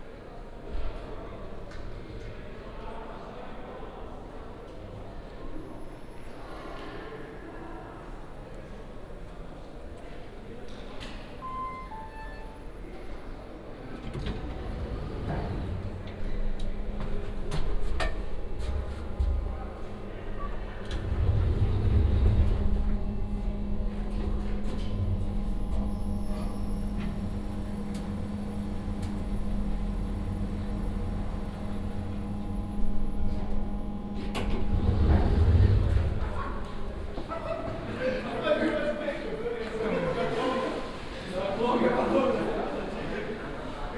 Maribor, university
maribor university, tech dept., ambience, walk, binaural